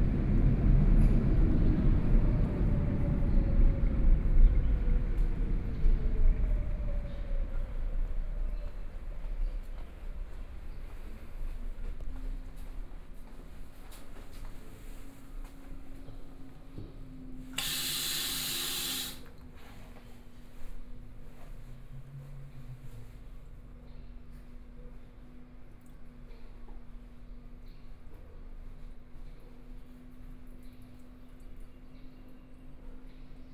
Dongshan Station, Yilan County - In the toilet
In the toilet, The sound of the train traveling through, Binaural recordings, Zoom H4n+ Soundman OKM II